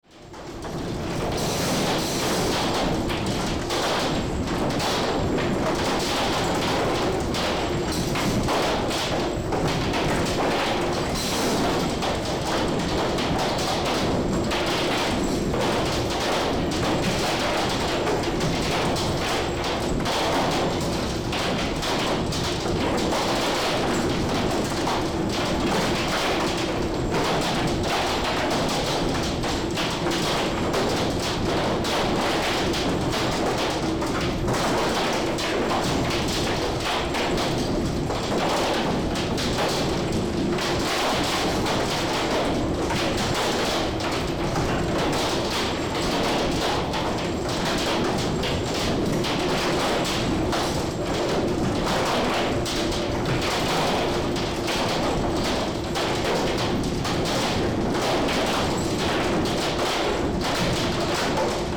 Punta Arenas, Región de Magallanes y de la Antártica Chilena, Chile - storm log - seaweed drying process
Seaweed drying process, wind = thunderstorm
"The Natural History Museum of Río Seco is located 13.5 km north (av. Juan Williams) of the city of Punta Arenas, in the rural sector of Río Seco, within the facilities of Algina SA; a seaweed drying Company, which have kindly authorized the use of several of their spaces for cultural purposes, as long as they do not interfere with the output of the Company. These facilities were built largely between 1903 and 1905, by the The South America Export Syndicate Lta. firm."
14 March 2019